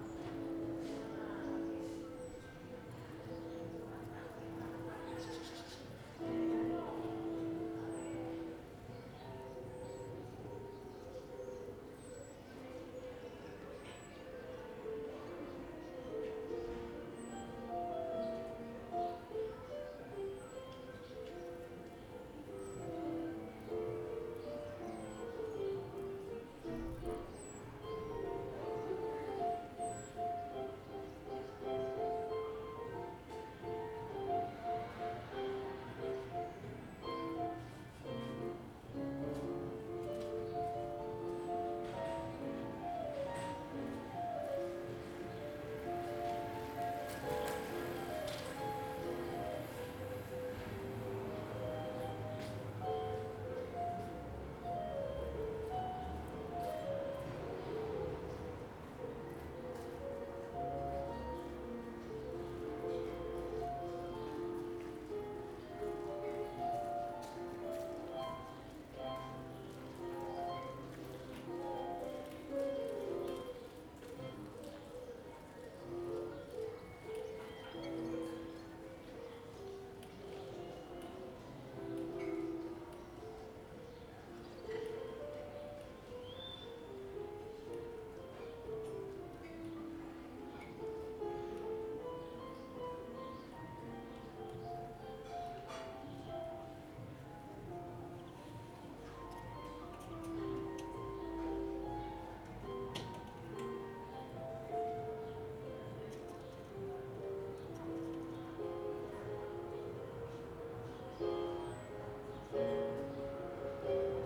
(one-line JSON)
{"title": "Carrer de Joan Blanques, Barcelona, España - 2020 April 1 BCN Lockdown", "date": "2020-04-12 14:00:00", "description": "Noises from the neighbourhood. People talking, someone playing the piano, music… Recorded from a window using a Zoom H2. No edition.", "latitude": "41.40", "longitude": "2.16", "altitude": "65", "timezone": "Europe/Madrid"}